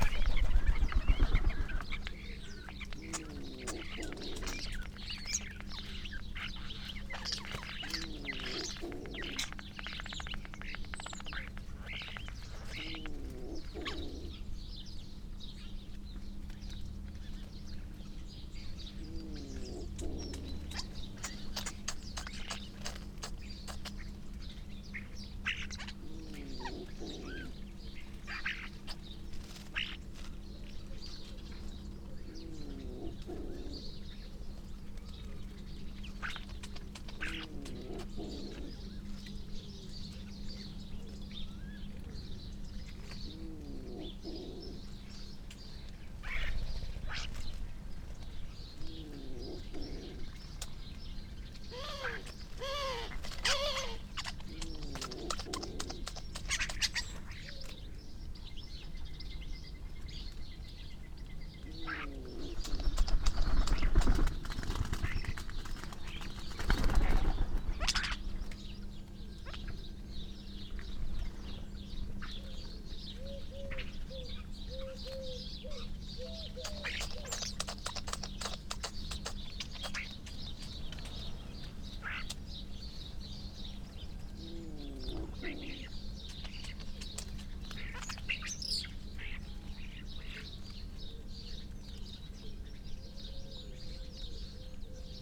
Chapel Fields, Helperthorpe, Malton, UK - starling gathering soundscape ...
starling gathering soundscape ... SASS on the floor facing skywards under hedge where the birds accumulate ... whistles ... clicks ... creaks ... purrs ... grating ... dry rolling and rippling calls and song from the starlings ... bird calls ... song ... from ... collared dove ... wood pigeon ... wren ... crow ... magpie ... dunnock ... background noise from traffic etc ...
8 August